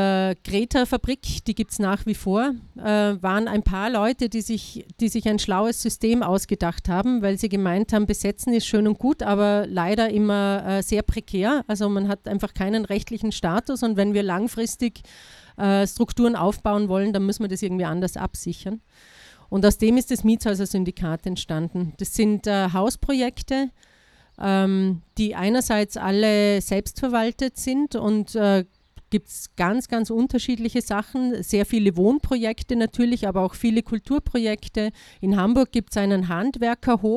Innstraße, Innsbruck, Österreich - Speakers Corner: Elke Rauth Publisher of dérive.at
Innsbruck, Austria, 15 June 2018